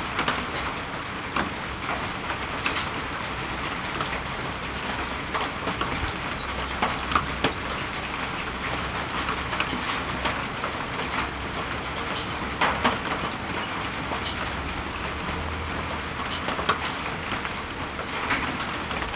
Blackland, Austin, TX, USA - Rain After the Eclipse 2
Recorded with a Pair of DPA4060s and a Marantz PMD661